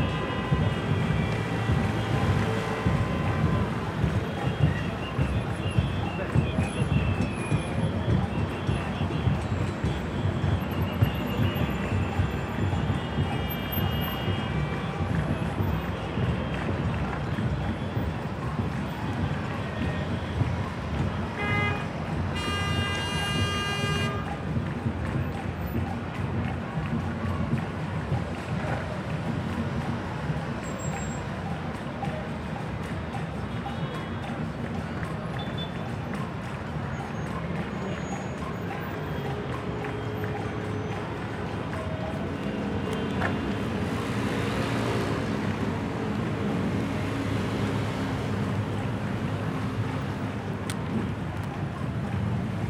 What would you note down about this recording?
Roma, Piazzale Flamino, Demonstrators block traffic.